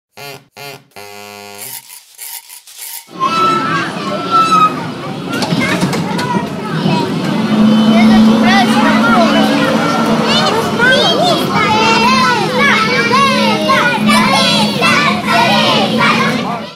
{"title": "Kalemegdan, Belgrade - Vozic (Touristic train)", "date": "2011-06-15 15:18:00", "latitude": "44.82", "longitude": "20.45", "altitude": "109", "timezone": "Europe/Belgrade"}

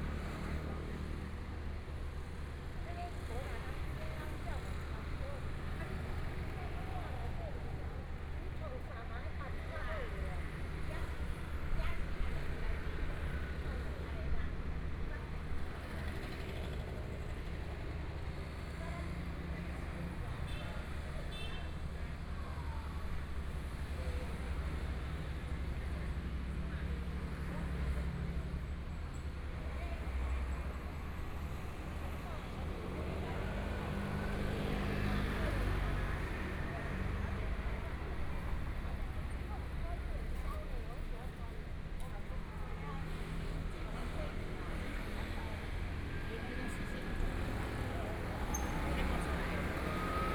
{"title": "Zhengqi Rd., Taitung City - At the intersection", "date": "2014-01-16 10:07:00", "description": "Traffic Sound, Dialogue between the vegetable vendors and guests, Binaural recordings, Zoom H4n+ Soundman OKM II ( SoundMap2014016 -2)", "latitude": "22.75", "longitude": "121.15", "timezone": "Asia/Taipei"}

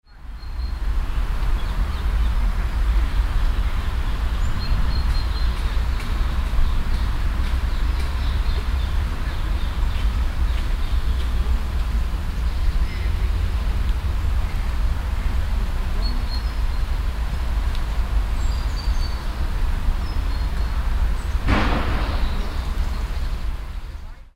{"title": "cologne, stadtgarten, unter Platane - koeln, stadtgarten, grosser baum, morgens", "date": "2008-05-06 22:05:00", "description": "stereofeldaufnahmen im september 07 - morgens\nproject: klang raum garten/ sound in public spaces - in & outdoor nearfield recordings", "latitude": "50.94", "longitude": "6.94", "altitude": "52", "timezone": "Europe/Berlin"}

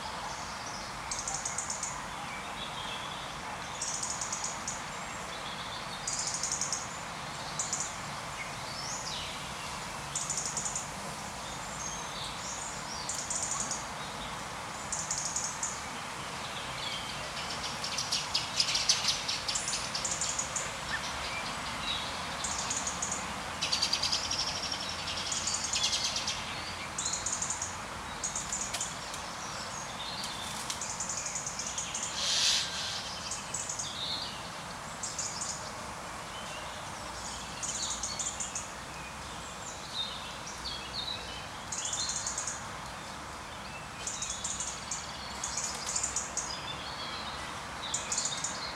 {"title": "Silence Valley, Olivais Sul 1800 Lisboa, Portugal - Quarantine Park", "date": "2020-03-22 16:42:00", "description": "\"Sillence Valley\" a park that retains its name againg because of the quarantine period, much less cars, much more birds. Recorded with a SD mixpre6 and a pair of clippy primo 172 in AB stereo configuration.", "latitude": "38.77", "longitude": "-9.12", "altitude": "83", "timezone": "Europe/Lisbon"}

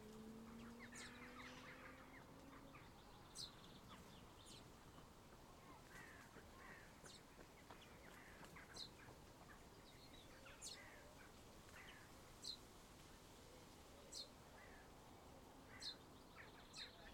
{"title": "Grantchester Meadows, Cambridge, UK - Grantchester Meadows Late June Evening", "date": "2020-06-09 20:51:00", "description": "Grantchester Meadows on a June evening. Zoom F1 and Zoom XYH-6 Stereo capsule attached to a tree in the meadows along the river footpath. Light wind gently rustling the leaves of the trees, birdsong and passers-by. Quieter than usual (even with the lockdown) given a colder turn in the weather.", "latitude": "52.19", "longitude": "0.10", "altitude": "10", "timezone": "Europe/London"}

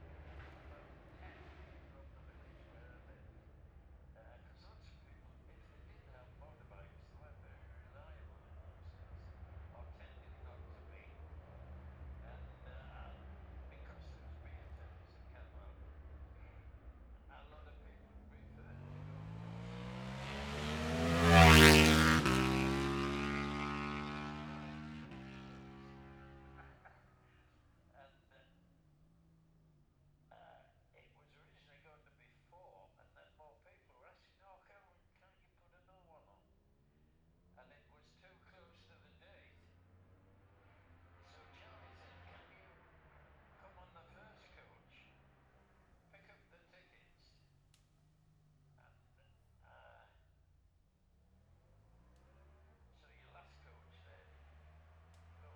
{"title": "Jacksons Ln, Scarborough, UK - gold cup 2022 ... twins practice ...", "date": "2022-09-16 09:50:00", "description": "the steve henshaw gold cup 2022 ... twins practice ... dpa 4060s on t-bar on tripod to zoom f6 ...", "latitude": "54.27", "longitude": "-0.41", "altitude": "144", "timezone": "Europe/London"}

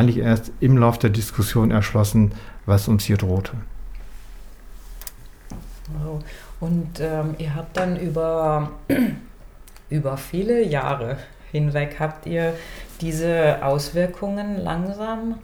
{"title": "Weetfeld Alte Schule, Hamm, Germany - Conversation in the Old School...", "date": "2014-11-28 16:46:00", "description": "We are gathered around the living room table in the old School of Weetfeld village, a historic building, and home to Rudi Franke-Herold and his family for over 30 years. Together with Stefan Reus, they are founding members of the “Citizen Association Against the Destruction of the Weetfeld Environment”. For almost 15 years, they have been a driving force of local environment activism. Rudi begins by describing the rural landscape around us, an ancient agricultural area. Archeological excavations document settlements from 600 BC. In 1999, Stefan and his wife Petra learnt about local government plans for a 260 ha large Industrial area, the “Inlogparc”, which would cover most of the Weetfeld countryside. With a door-to-door campaign, they informed their neighbours. A living-room neighbourhood meeting was the first step to founding a citizen organization in 2000.\nentire conversation archived at:", "latitude": "51.63", "longitude": "7.79", "altitude": "72", "timezone": "Europe/Berlin"}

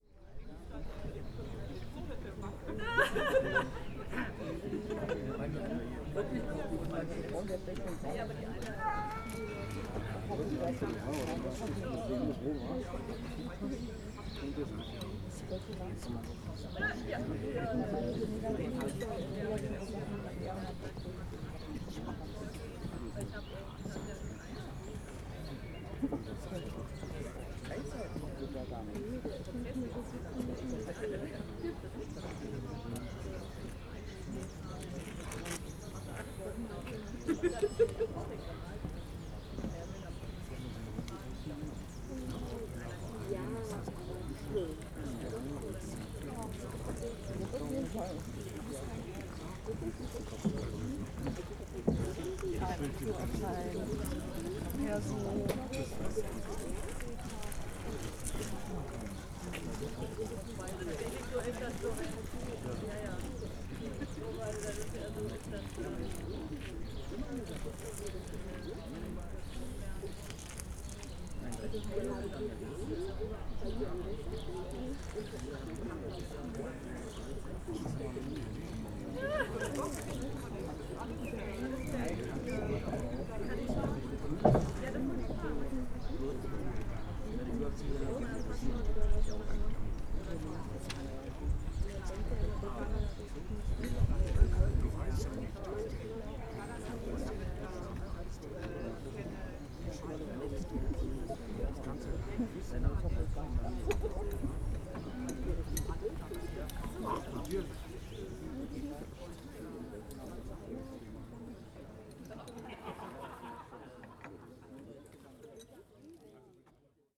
{"title": "berlin, hasenheide: biergarten - the city, the country & me: beer garden", "date": "2011-04-02 16:28:00", "description": "the city, the country & me: april 2, 2011", "latitude": "52.49", "longitude": "13.41", "altitude": "43", "timezone": "Europe/Berlin"}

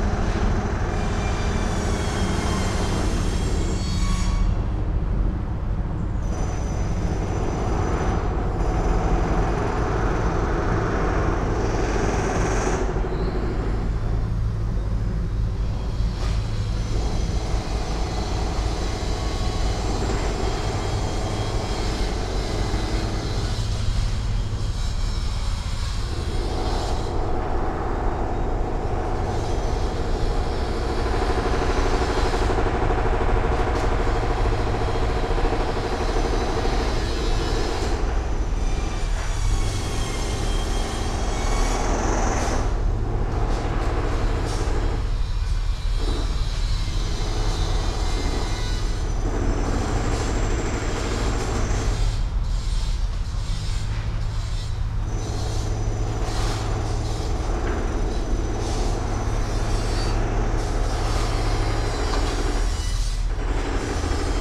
{"title": "Lok n Store Building plot, The river Kennet, Reading Berkshire, UK - Construction sounds of 119 riverside flats being built", "date": "2018-08-09 11:05:00", "description": "This is another recording of the building work going on across the river from me, completion is due to be Autumn 2019, I will have been driven insane by then...Sony M10 Boundary Array.", "latitude": "51.45", "longitude": "-0.97", "altitude": "40", "timezone": "GMT+1"}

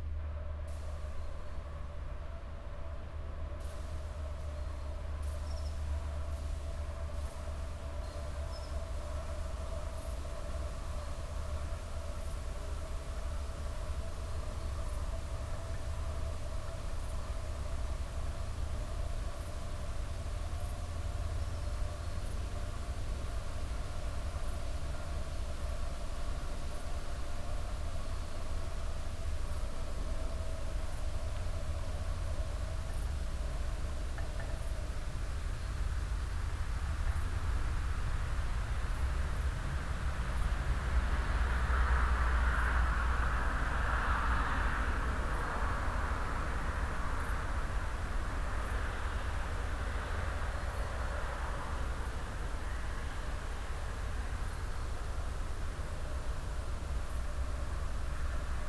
{"title": "Linköping S, Schweden - Sweden, Stafsäter - morning atmosphere", "date": "2013-08-21 08:00:00", "description": "Standing on a small meadow in front of the guest house in the early morning time. The overall morning silence with a group of darks barking constantly in the distance. Some traffic passing by - the sounds of insects and a wooden windplay moving in the mellow morning breeze.\nsoundmap international - social ambiences and topographic field recordings", "latitude": "58.31", "longitude": "15.67", "altitude": "112", "timezone": "Europe/Stockholm"}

